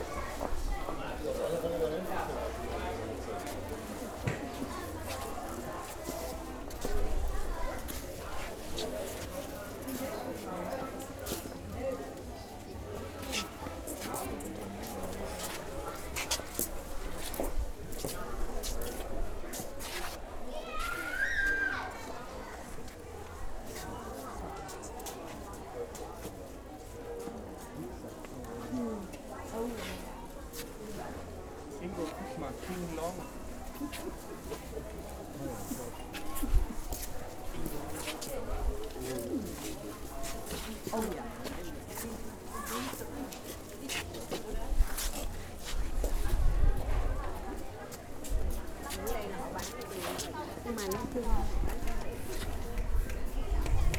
berlin, herzbergstraße: dong xuan center, halle - the city, the country & me: dong xuan center, hall 1
soundwalk through hall 1 of the dong xuan center, a vietnamese indoor market with hundreds of shops where you will find everything and anything (food, clothes, shoes, electrical appliance, toys, videos, hairdressers, betting offices, nail and beauty studios, restaurants etc.)
the city, the country & me: march 6, 2011
Berlin, Deutschland, 6 March 2011, 15:47